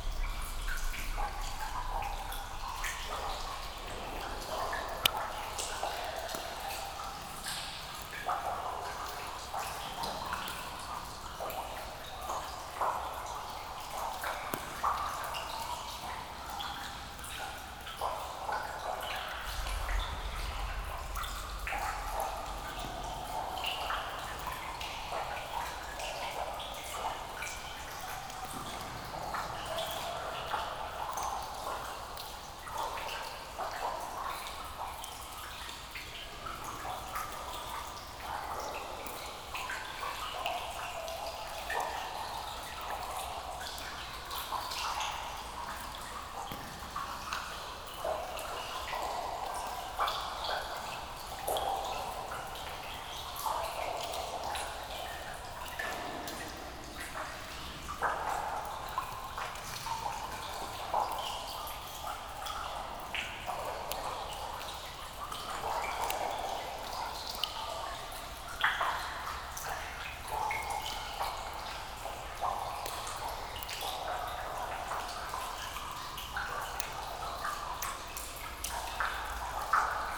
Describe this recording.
In this village of the Jura area, there's a small marble underground quarry. The square room includes a lake. This is here the pleasant sound of drops falling into the lake, some drops falling directly on the microphones, and also a few sounds from the outside as the room is not very huge.